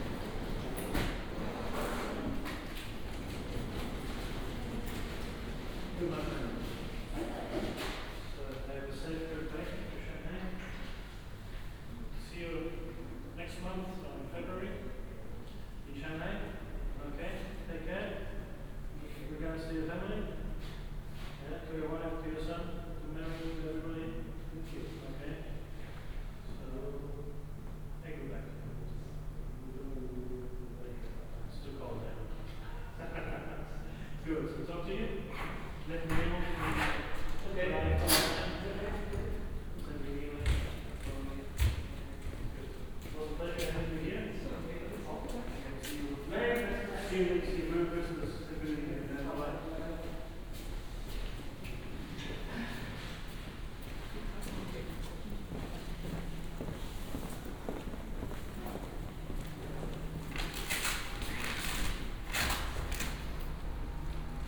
Limburg an der Lahn, ICE station - entrance hall ambience
ICE station Limburg, entrance hall ambience. this is a quite strange station, no shops, bars, cafes etc., just a quickly built hall, very uncomfortable, mainly for commuters. some high speed trains from Cologne to Frankfurt stop here, connecting the country side with the centers.
(Sony PCM D50, OKMII)